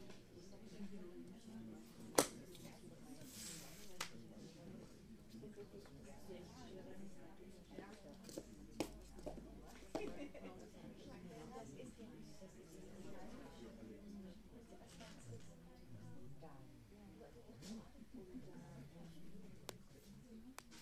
Kaiser-Wilhelm-Platz, Berlin, Deutschland - Hall
inside the Free Masons'Guild Hall, bodywork training session about to begin